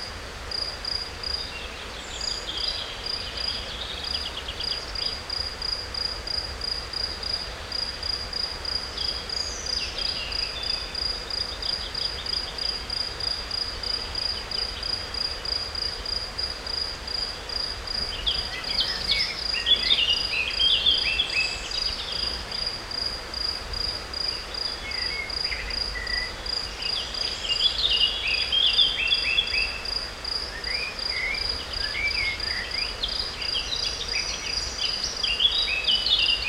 This soundscape was recorded next to the Breggia river in Valle di Muggio (Ticino, Switzerland), in the evening.
Bird's songs, crickets, insects, river.
It is a binaural recording, headphones are recommended.
Summer Solstice June 21, 2021
Ticino, Schweiz/Suisse/Svizzera/Svizra, 21 June 2021